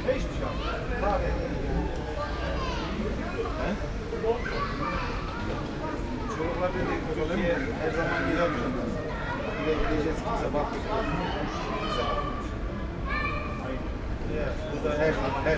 Ernst-Reuter-Platz, Monheim am Rhein, Deutschland - Monheim am Rhein - Ernst Reuter Platz - in front of Golden Hans
At the Ernst Reuter Platz in Monheim am Rhein in front of the location "Goldener Hans" - the sound of people talking while sitting in front of two turkish restaurants - cars passing by - in the distance child voices
soundmap nrw - topographic field recordings and social ambiences